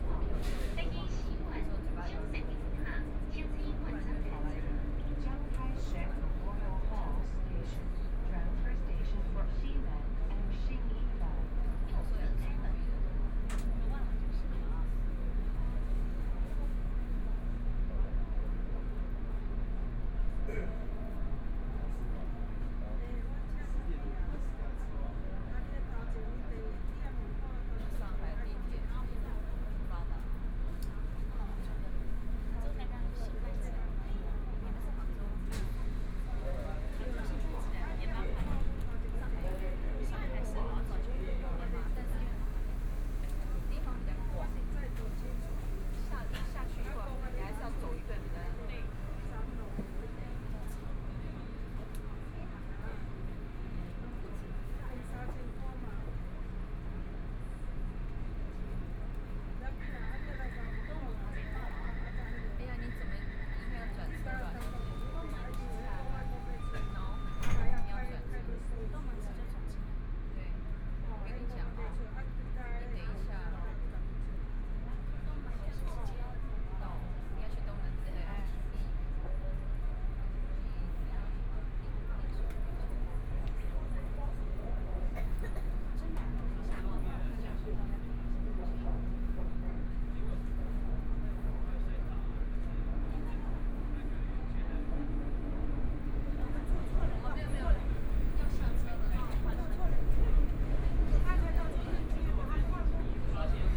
Taipei, Taiwan - Tamsui Line (Taipei Metro)
from Shuanglian station to Chiang Kai-shek Memorial Hall station, Binaural recordings, Zoom H4n+ Soundman OKM II